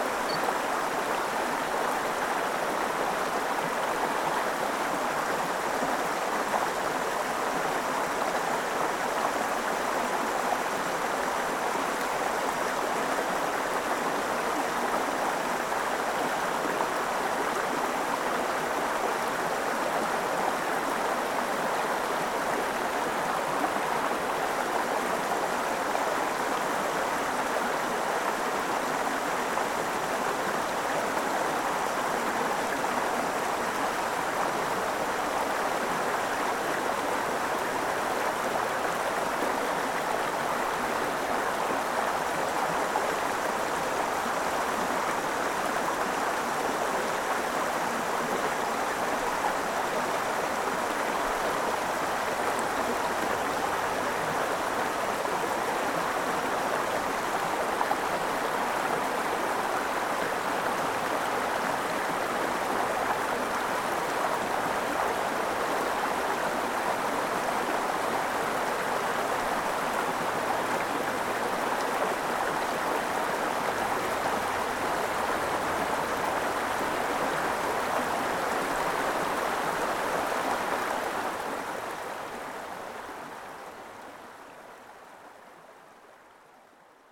Près du nant du Forezan une petite rivière au calme près de la ferme du Forezan à Cognin.
France métropolitaine, France, 29 July 2021